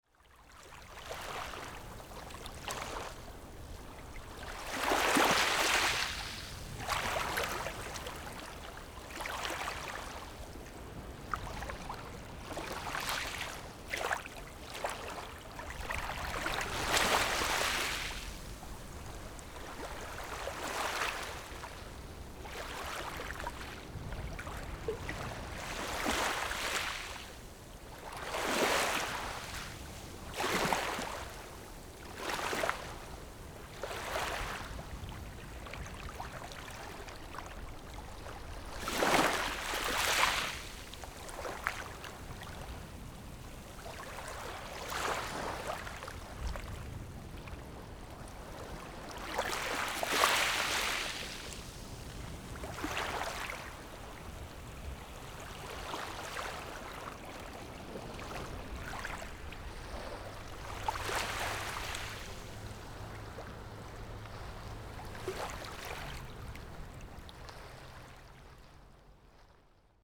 后沃海濱公園, Beigan Township - Tide
Sound of the waves, Small beach, Tide
Zoom H6 +Rode NT4
福建省, Mainland - Taiwan Border